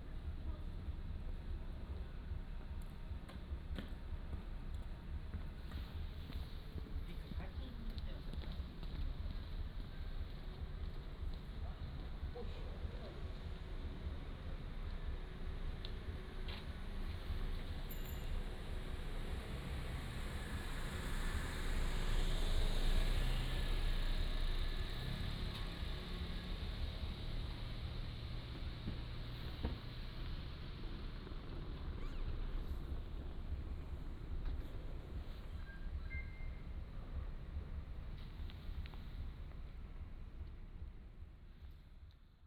Garak-ro, Gimhae-si - In the corner of the road
In the corner of the road, Traffic Sound, Cold night